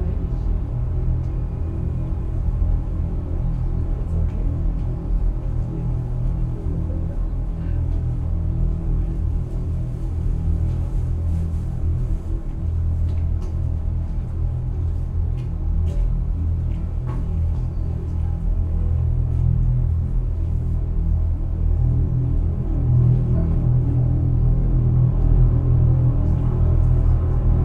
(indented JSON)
{
  "title": "Laboratooriumi Tn drain, Tallinn",
  "date": "2011-07-06 13:40:00",
  "description": "recording a drain on Laboratooriumi Street as part of the Drainscapes workshop during Tuned City Talllinn",
  "latitude": "59.44",
  "longitude": "24.75",
  "altitude": "25",
  "timezone": "Europe/Tallinn"
}